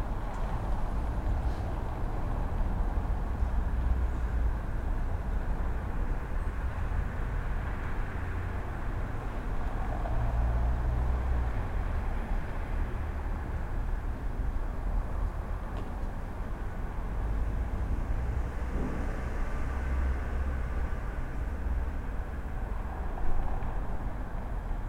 Celetna, Prague - From my hotel room window, Celetna, Prague
9am, street sounds recorded from my 1st floor hotel room window. Just as it started to lightly snow.